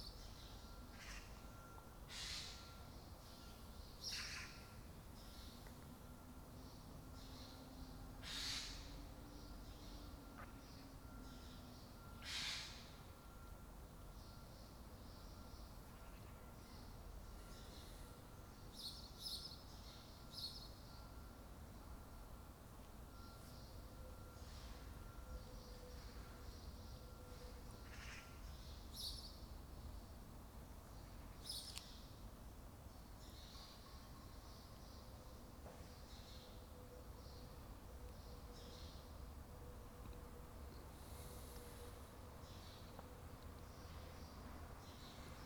Carreira e Fonte Coberta, Portugal - morning birds